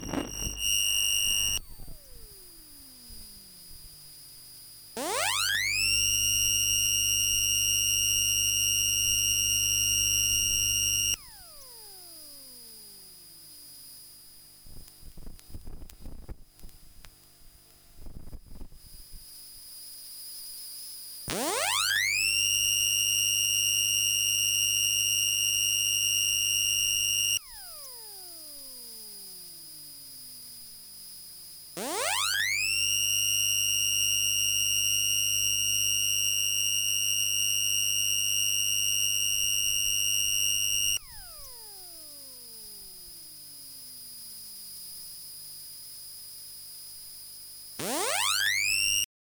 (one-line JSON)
{"title": "Brussel, België - Dyson hand dryer", "date": "2013-03-27 16:07:00", "description": "This is how a Dyson hand dryer sounds when you listen to it with an electromagnetic microphone.", "latitude": "50.84", "longitude": "4.36", "altitude": "45", "timezone": "Europe/Brussels"}